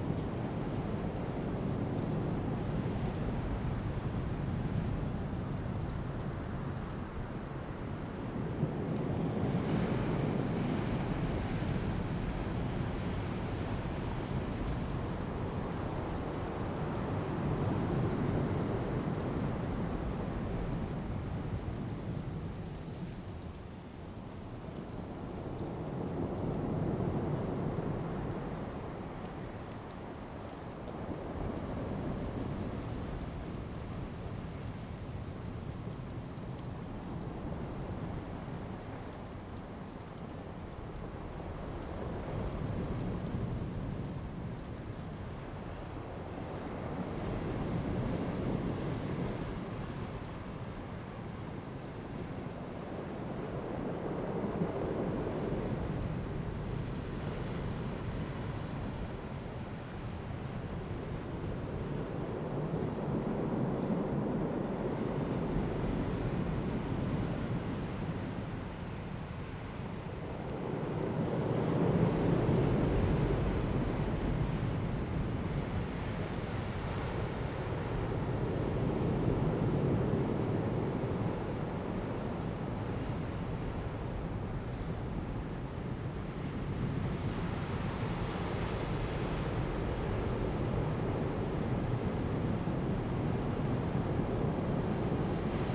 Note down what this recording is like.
At the beach, Sound of the waves, In the bush, Zoom H2n MS+XY